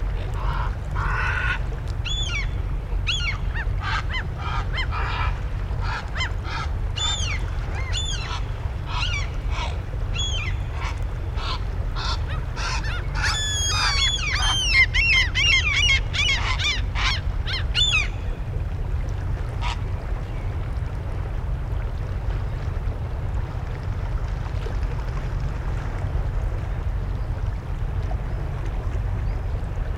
gulls and boats at Paljasaare, Tallinn
sunset by the seaside at Paljasaare Tallinn Estonia